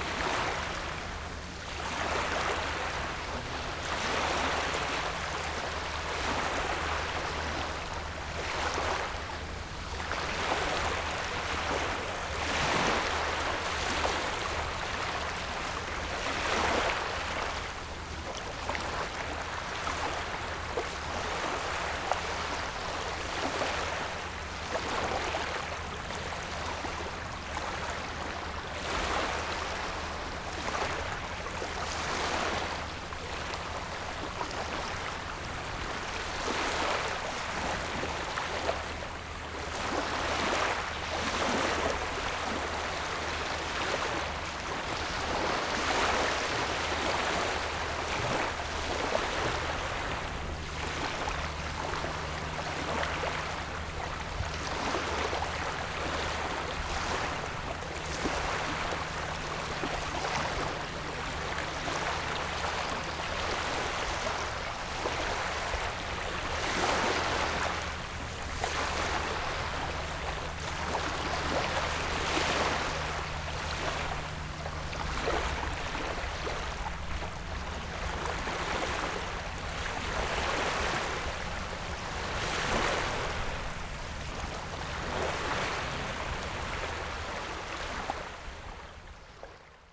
講美村, Baisha Township - Wave and tidal
Wave and tidal, At the beach
Zoom H6 + Rode NT4